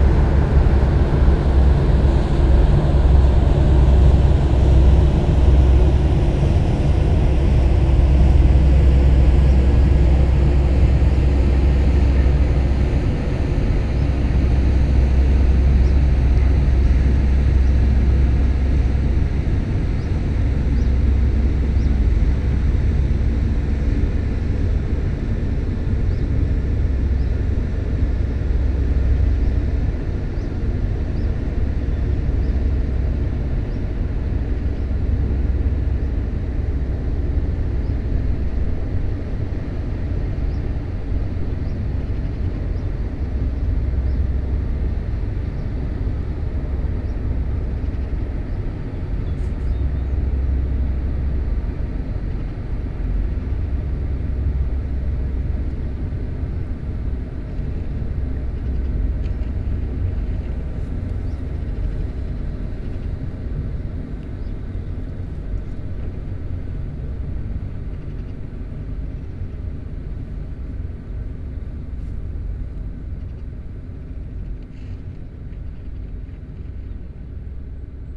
Saint-Pierre-la-Garenne, France - Boat
A tourist boat is passing by on the Seine river. It's the Nicko cruises, transporting german people.